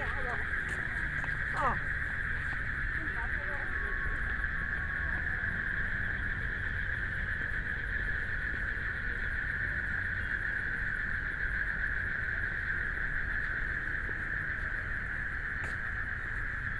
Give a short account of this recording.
Walking along the lake, People walking and running, Traffic Sound, Frogs sound, Binaural recordings